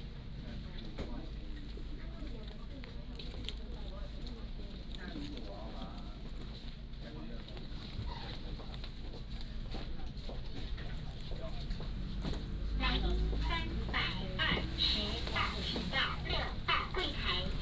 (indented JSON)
{
  "title": "Dazhong St., Tamsui Dist. - At the post office",
  "date": "2015-01-22 13:25:00",
  "description": "At the post office",
  "latitude": "25.18",
  "longitude": "121.44",
  "altitude": "48",
  "timezone": "Asia/Taipei"
}